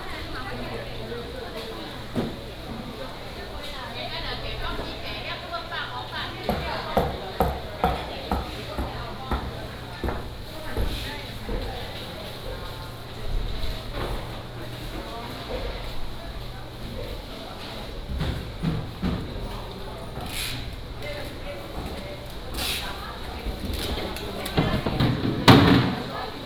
保安市場, Tainan City - Walking in the market
Walking in the market